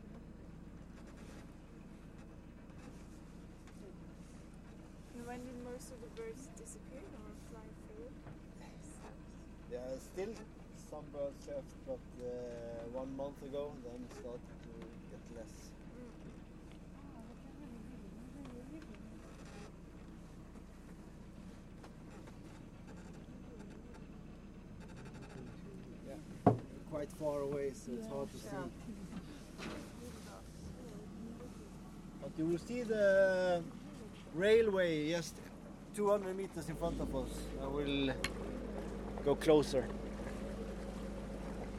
{"title": "Svalbard, Svalbard and Jan Mayen - polarfoxes in Grumant", "date": "2011-09-10 15:30:00", "description": "On a sailing trip towards the old abandonned mining town, Grumant, polar foxes cross the landscape.", "latitude": "78.19", "longitude": "15.12", "timezone": "Arctic/Longyearbyen"}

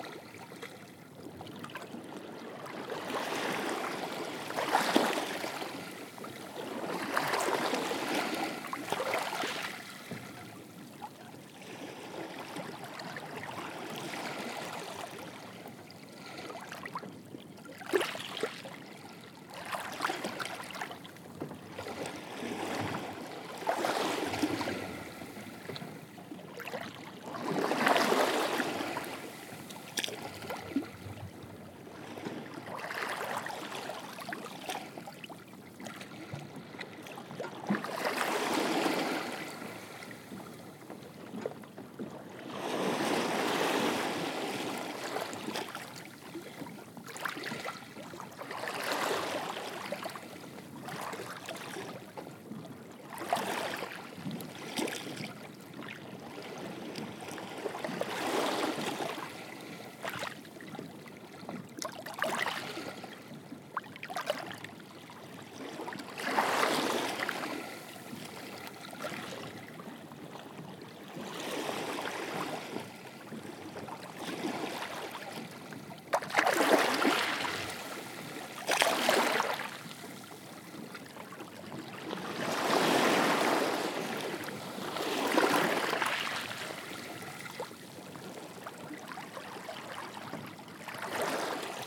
{"title": "Shoreline, Schull, Co. Cork, Ireland - Lapping Waves and Boats", "date": "2019-07-21 10:00:00", "description": "Recorded on a calm morning with Zoom H1 placed on mini tripod as close to the waves as possible.", "latitude": "51.52", "longitude": "-9.54", "altitude": "8", "timezone": "Europe/Dublin"}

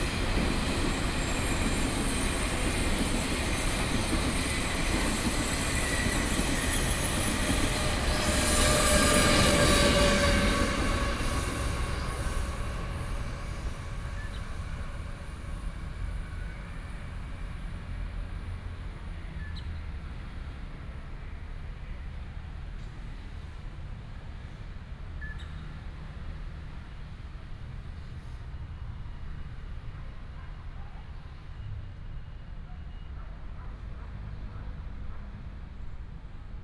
{"title": "Zhongshan Rd., 苗栗市 - in the Park", "date": "2017-03-22 16:36:00", "description": "Fireworks sound, bird sound, The train runs through, Traffic sound", "latitude": "24.56", "longitude": "120.82", "altitude": "53", "timezone": "Asia/Taipei"}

